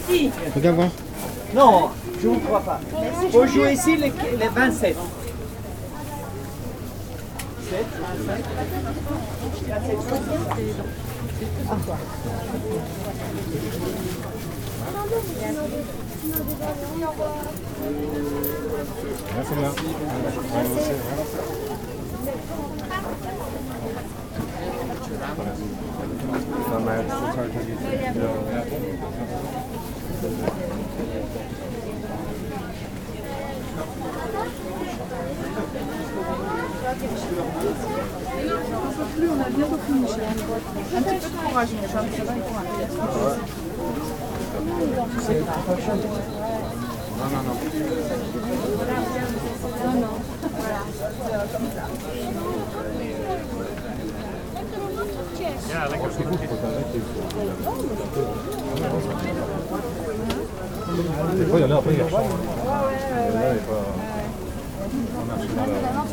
l'isle sur la sorgue, quai rouge de l' isle, market - l'isle sur la sorgue, quai rouge de l'isle, market
On the weekly market at L'Isle Sur la Sorgue nearby one of the Sorgue river channels. Market stands and vistors passing by on the narrow road.
international village scapes - topographic field recordings and social ambiences